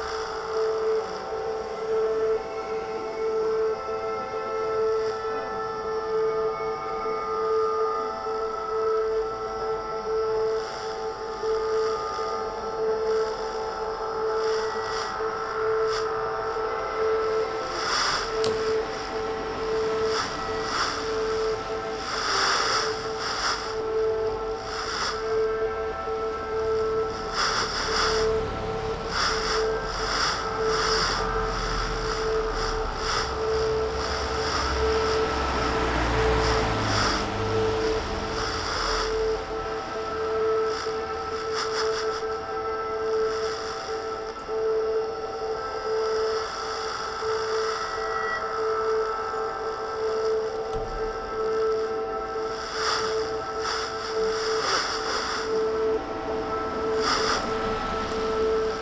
bürknerstraße: in front of a betting shop - bring it back to the people: standing waves by HOKURO - transistor radio in front of a betting shop
transistor radio on the pavement during the transmission of the aporee event >standing waves< by HOKURO on fm 100
HOKURO are Sachiyo Honda, Sabri Meddeb, Michael Northam (accordion, objects, strings, winds, voices and electronics)
... we invite you to participate by playing with us on any kind of instrument or voice that can sustain an A or E or equalivant frequency - the idea is to try to maintain and weave inside a river of sound for as long as possible ... (from the invitation to the concert at radio aporee berlin, Nov. 28 2009)